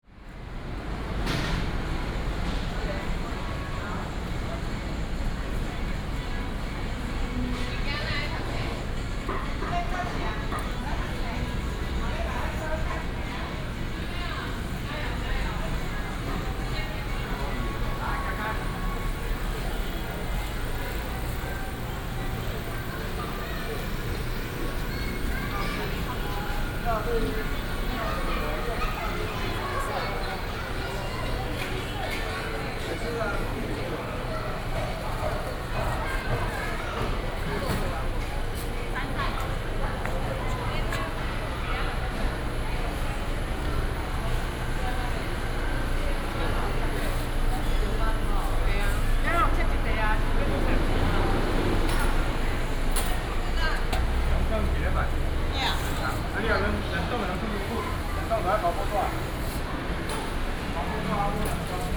新大慶黃昏市場, Taichung City - walking in the Evening market
walking in the Evening market, Traffic sound